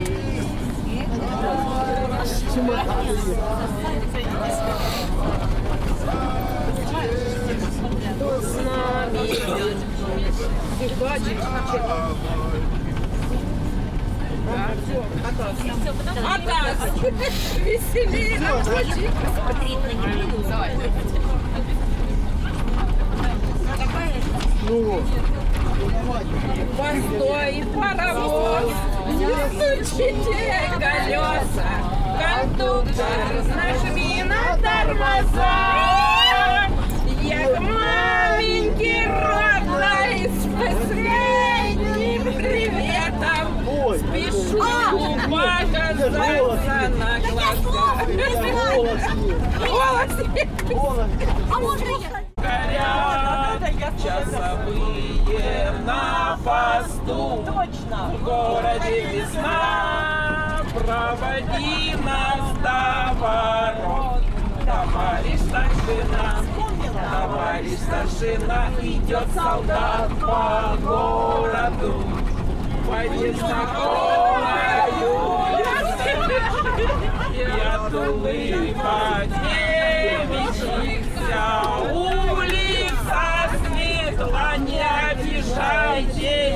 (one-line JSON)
{"title": "Донецька область, Украина - Шум дороги, разговоры и пение в автобусе", "date": "2019-01-20 14:54:00", "description": "Ночная поездка, беседы пассажиров и любительское пение", "latitude": "47.99", "longitude": "36.96", "altitude": "108", "timezone": "GMT+1"}